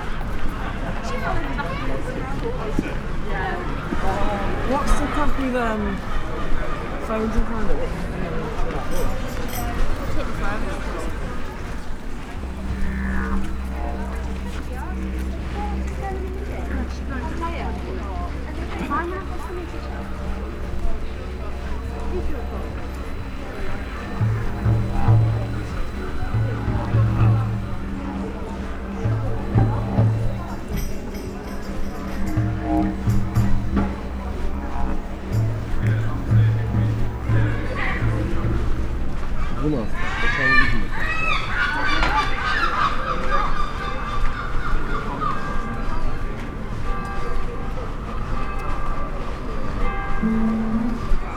{
  "title": "Changing sounds along the High Street, Worcester, UK - Shops-People",
  "date": "2018-07-04 12:00:00",
  "description": "Recorded during a walk along the High Street shopping area with snatches of conversations, street entertainers and the changing ambient image as I visit locations and change direction.",
  "latitude": "52.19",
  "longitude": "-2.22",
  "altitude": "31",
  "timezone": "Europe/London"
}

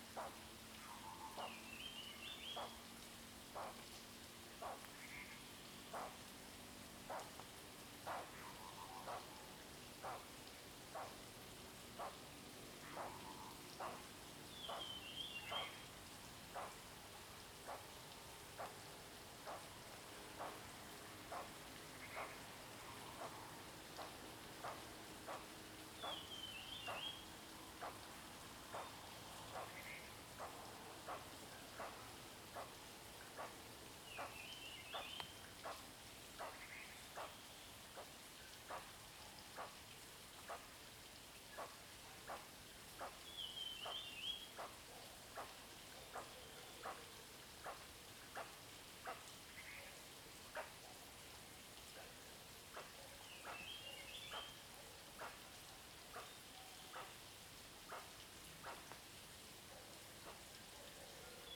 {
  "title": "Shuishang Ln., Puli Township 桃米里 - Bird sounds",
  "date": "2016-03-26 06:19:00",
  "description": "Morning in the mountains, Bird sounds, Traffic Sound\nZoom H2n MS+XY",
  "latitude": "23.94",
  "longitude": "120.92",
  "altitude": "534",
  "timezone": "Asia/Taipei"
}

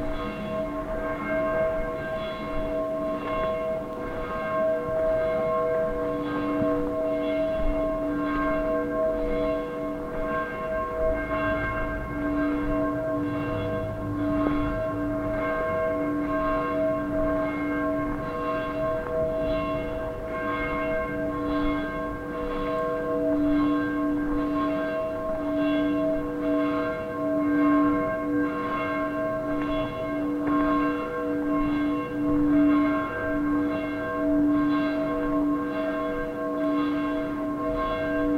{
  "title": "Franciscans chruch, Przemyśl, Poland - (74 BI) Bells on Christmas eve",
  "date": "2016-12-25 16:27:00",
  "description": "Binaural recording of bells in the evening of first Christmas day.\nRecorded with Soundman OKM on Sony PCM D100.",
  "latitude": "49.78",
  "longitude": "22.77",
  "altitude": "227",
  "timezone": "Europe/Warsaw"
}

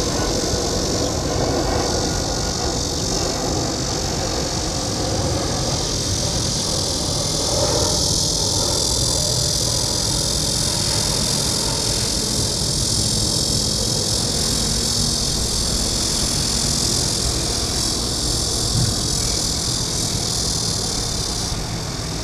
{
  "title": "Sanzhi, New Taipei City - On the beach at the entrance",
  "date": "2012-06-25 10:55:00",
  "latitude": "25.25",
  "longitude": "121.47",
  "altitude": "1",
  "timezone": "Asia/Taipei"
}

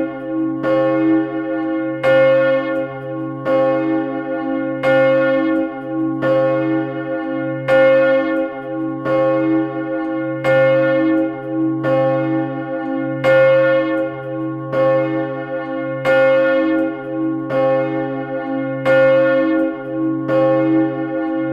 {
  "title": "Enghien, Belgique - Enghien bell",
  "date": "2013-05-25 17:00:00",
  "description": "Solo of the Enghien big bell. This is an old bell dating from 1754 and it weights 3 tons. Recorded inside the tower.",
  "latitude": "50.69",
  "longitude": "4.04",
  "altitude": "57",
  "timezone": "Europe/Brussels"
}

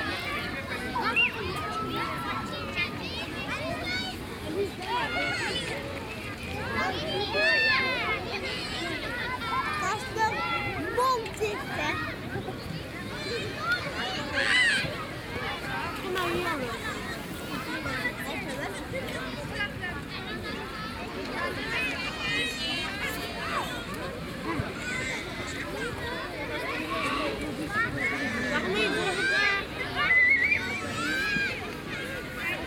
{
  "title": "Hofstade Strand, Tervuursesteenweg, Zemst, Belgium - Beach ambience",
  "date": "2022-07-11 14:18:00",
  "description": "Sunny afternoon, children playing.\nBinaural recording, listen with headphones.",
  "latitude": "50.98",
  "longitude": "4.51",
  "altitude": "7",
  "timezone": "Europe/Brussels"
}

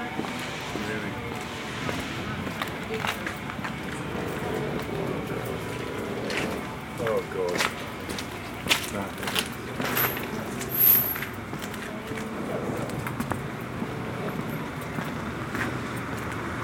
{
  "title": "Gordon Promenade E, Gravesend, UK - Gravesend End",
  "date": "2021-08-20 16:30:00",
  "description": "Walking to Gravesend Canal Basin, at River Thames end of the Thames and Medway Canal.",
  "latitude": "51.44",
  "longitude": "0.38",
  "altitude": "5",
  "timezone": "Europe/London"
}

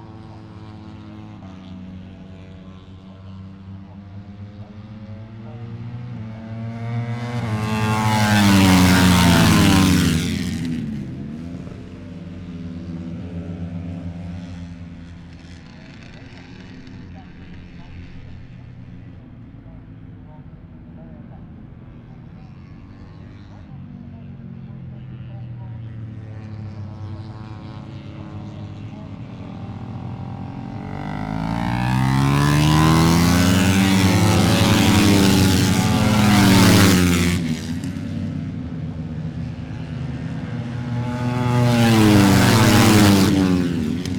{
  "title": "Silverstone Circuit, Towcester, UK - British Motorcycle Grand Prix 2017 ... moto one ...",
  "date": "2017-08-25 09:00:00",
  "description": "moto one ... free practice one ... open lavalier mics on T bar and mini tripod ...",
  "latitude": "52.07",
  "longitude": "-1.01",
  "altitude": "158",
  "timezone": "Europe/London"
}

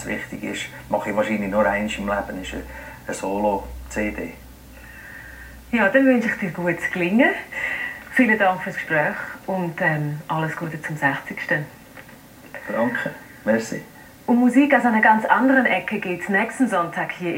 {
  "title": "St. Gallen (CH), morning traffic - St. Gallen (CH), radisson hotel, tv",
  "description": "tv music magazine \"klanghotel\" about Koch/Schütz/Studer, interview with drummer Fredy Studer. Recorded in the hotel room, june 16, 2008. - project: \"hasenbrot - a private sound diary\"",
  "latitude": "47.43",
  "longitude": "9.38",
  "altitude": "664",
  "timezone": "GMT+1"
}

